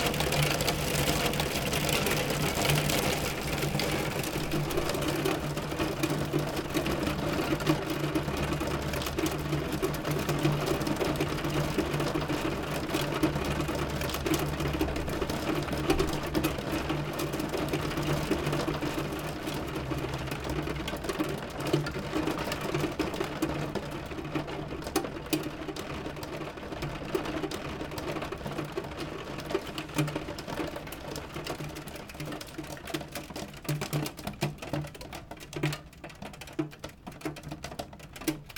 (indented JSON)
{
  "title": "une maison, Trégastel, France - Hail, rain on a velux window [Tregastel]",
  "date": "2019-04-22 15:27:00",
  "description": "Grêle tombant sur le velux de la salle de bain.\nHail falling on the velux window of the bathroom.\nApril 2019.",
  "latitude": "48.81",
  "longitude": "-3.50",
  "altitude": "49",
  "timezone": "Europe/Paris"
}